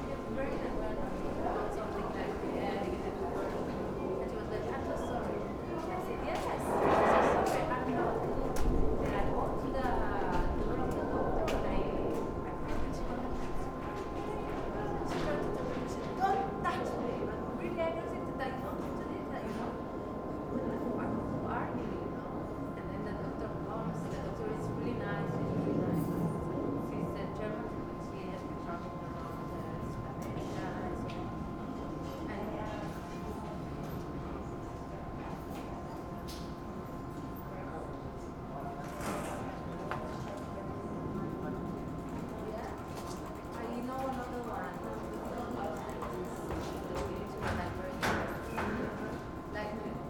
Central, Berlin Mitte - yard ambience
Hinterhof, entrance area of Central cinema
(Sony PCM D50)